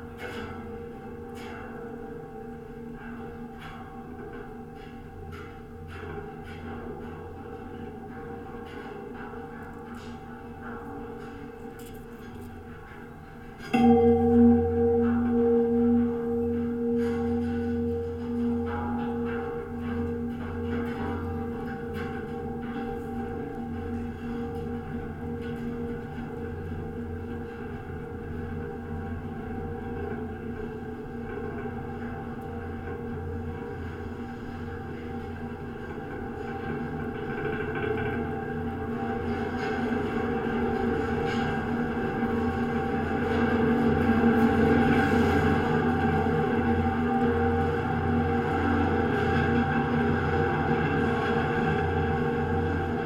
{"title": "pedestrian suspension bridge railing, Calgary", "date": "2010-04-21 21:24:00", "description": "amazing tonal sounds from a pedestrian suspension bridge on Princes Island Calgary Canada", "latitude": "51.06", "longitude": "-114.07", "altitude": "1041", "timezone": "Europe/Tallinn"}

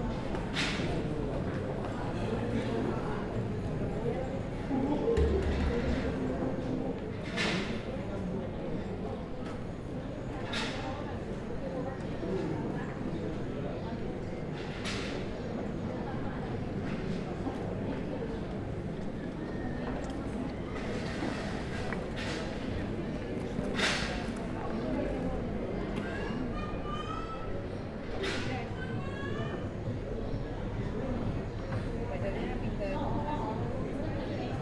{"title": "Estepona, streets with little shops", "date": "2011-03-31 11:14:00", "description": "sitting on a bench in a street with little shops, people passing by, relaxed athmosphere", "latitude": "36.42", "longitude": "-5.15", "altitude": "11", "timezone": "Europe/Madrid"}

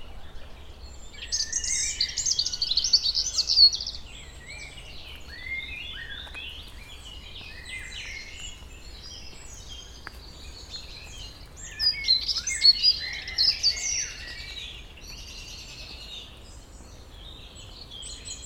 {"title": "Chemin des Tigneux, Chindrieux, France - Dans les bois", "date": "2022-04-10 16:58:00", "description": "Chemin pédestre dans la forêt au dessus de Chindrieux, chants de rouge-gorge, merles, bruits de la vallée le clocher sonne 17h.", "latitude": "45.82", "longitude": "5.86", "altitude": "383", "timezone": "Europe/Paris"}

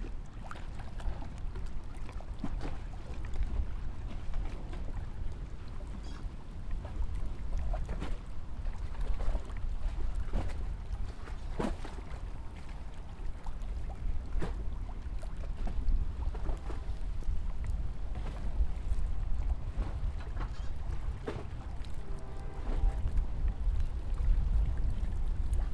{"title": "Canton, Baltimore, MD, USA - living nearby the habour", "date": "2016-10-04 11:26:00", "latitude": "39.28", "longitude": "-76.58", "altitude": "3", "timezone": "America/New_York"}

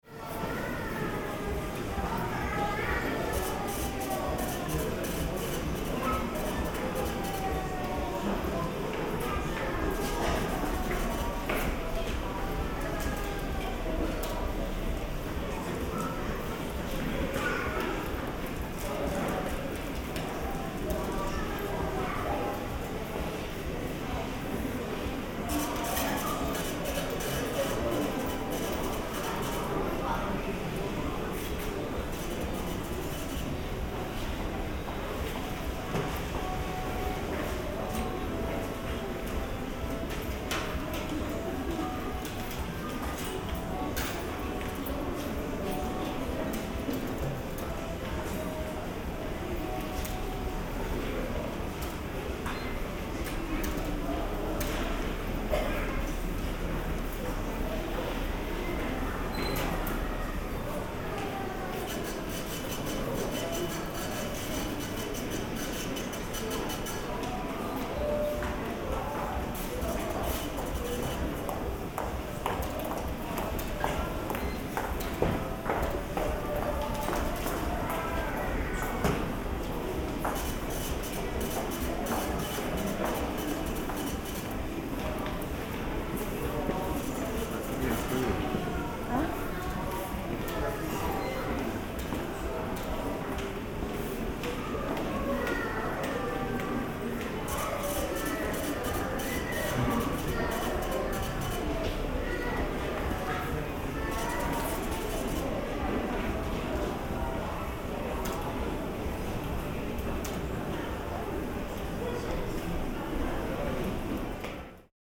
{"title": "Russia, Severodvinsk - shopping center", "date": "2013-01-04 13:51:00", "description": "shopping center\nrecorded on zoom h4n + roland cs-10em (binaural recording)\nЦУМ, г. Северодвинск", "latitude": "64.55", "longitude": "39.78", "altitude": "8", "timezone": "Europe/Moscow"}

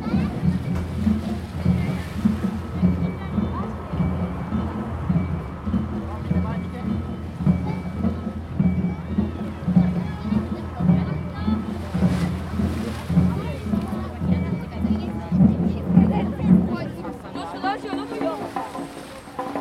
{"title": "Japonia - Enoshima Drums", "date": "2015-03-15 13:30:00", "description": "A drum concert going on near the shore of Enoshima island", "latitude": "35.30", "longitude": "139.48", "altitude": "3", "timezone": "Asia/Tokyo"}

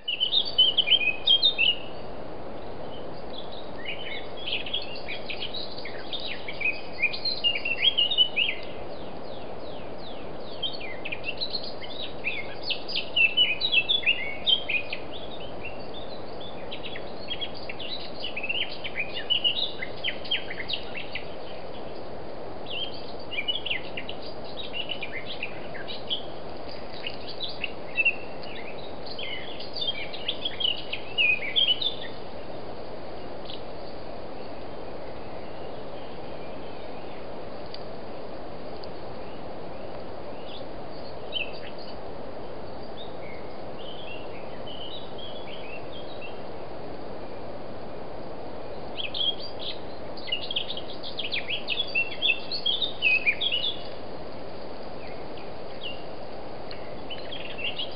{"title": "Varín, Slovenská republika - Near chalet under Suchy hill", "date": "2008-05-29 16:16:00", "latitude": "49.18", "longitude": "18.94", "altitude": "1134", "timezone": "Europe/Bratislava"}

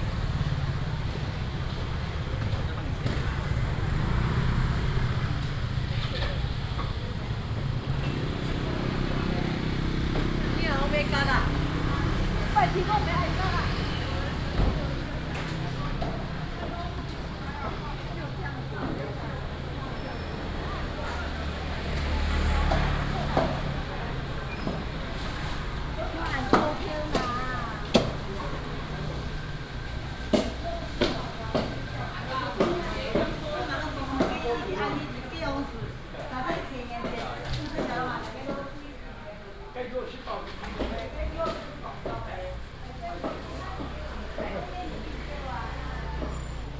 {"title": "Fuhua St., Yangmei Dist. - Market block", "date": "2017-08-26 06:50:00", "description": "walking in the traditional Market block area, vendors peddling", "latitude": "24.91", "longitude": "121.14", "altitude": "177", "timezone": "Asia/Taipei"}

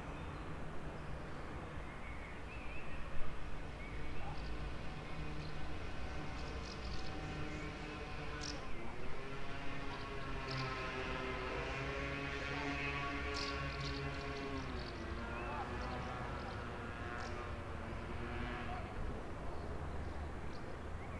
{"title": "Perugia, Italia - a quite terrace", "date": "2014-05-21 18:14:00", "description": "a quite terrace, birds and traffic from long distance\n[XY: smk-h8k -> fr2le]", "latitude": "43.11", "longitude": "12.39", "altitude": "456", "timezone": "Europe/Rome"}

